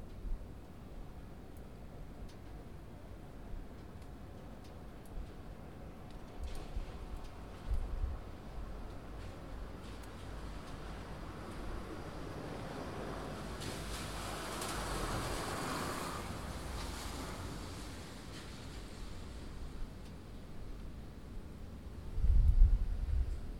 Hartley Avenue, Highfield, Southampton, UK - 031 Behind the Hartley Library
31 January 2017, 22:15